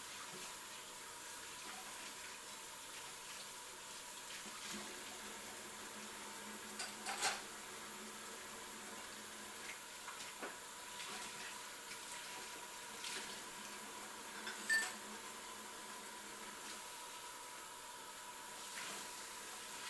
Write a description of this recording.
Dish washing on a sunday afternoon, quietly and alone at home. Water sound, sink sound, plates, silverware being handled and scrubbed, humming of the refrigerator, a little bit of footsteps towards the end. Recorded with Zoom H5 XYH-5 capsules.